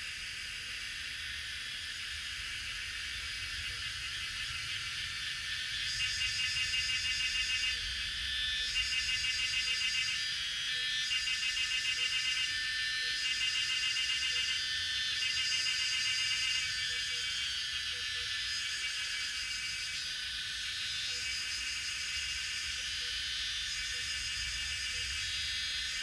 水上巷, TaoMi, Puli Township - Bird sounds and Cicadas cry
Cicada sounds, Bird sounds
Zoom H2n MS+XY
Puli Township, 水上巷28號, 17 May 2016